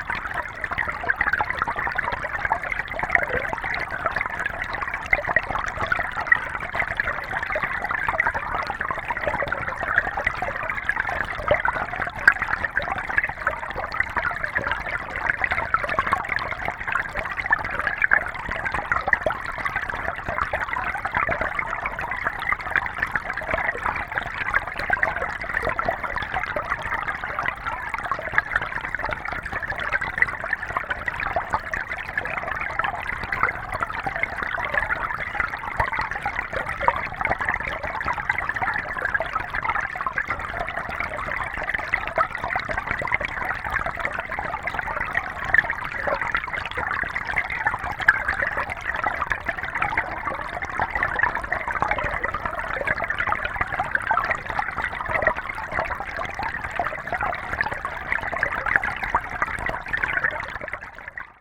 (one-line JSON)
{"title": "Keifer Creek, Ballwin, Missouri, USA - Keifer Creek Hydrophone", "date": "2021-04-13 19:08:00", "description": "Hydrophone recording in a riffle of Keifer Creek.", "latitude": "38.55", "longitude": "-90.55", "altitude": "132", "timezone": "America/Chicago"}